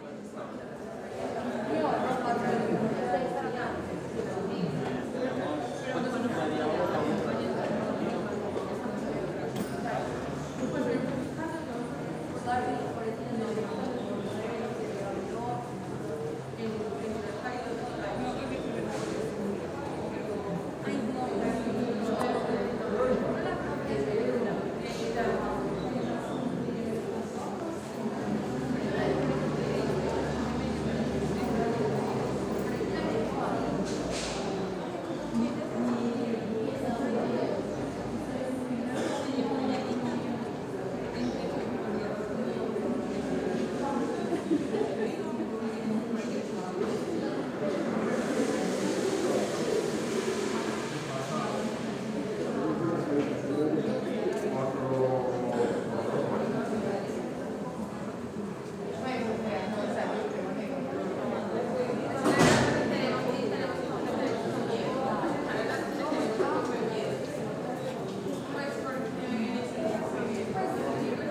Cra., Medellín, Antioquia, Colombia - Ambiente bloque 10 piso 2 Universidad de Medellin 01
Sonido ambiente en el piso 2 del bloque 10 de la Universidad de Medellín en la Facultad de Comunicación, se escuchan voces, pasos, sillas moviéndose y un hombre tosiendo. También se escuchaban los estudiantes dirigiéndose a sus salones para las clases de las 10 am.
Coordenadas: 6°13'55.8"N 75°36'43.3"W
Sonido tónico: voces hablando.
Señales sonoras: tos de un hombre, silla arrastrada y risas
Grabado a la altura de 1.60 metros
Tiempo de audio: 4 minutos con 4 segundos.
Grabado por Stiven López, Isabel Mendoza, Juan José González y Manuela Gallego con micrófono de celular estéreo.
Antioquia, Región Andina, Colombia, 27 September 2021, 09:46